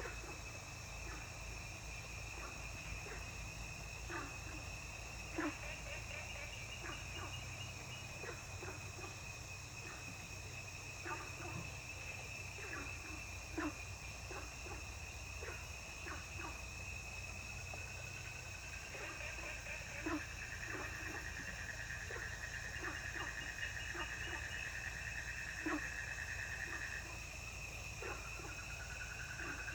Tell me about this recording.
Sound of insects, Frogs chirping, Ecological pool, Zoom H2n MS+XY